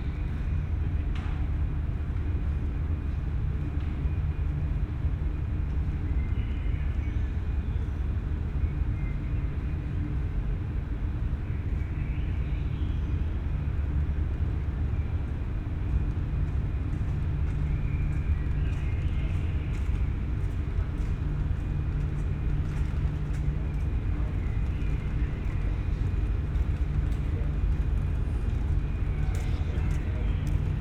Berlin: Vermessungspunkt Friedelstraße / Maybachufer - Klangvermessung Kreuzkölln ::: 12.07.2012 ::: 04:13
Berlin, Germany